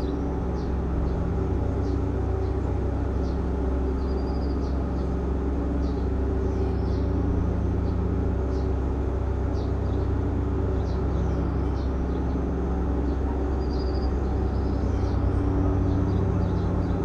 at exactly 10am on a friday morning in summer the neighborhood fills with the sounds of lawnmowers.
Maribor, Slovenia, 15 June 2012, 10:32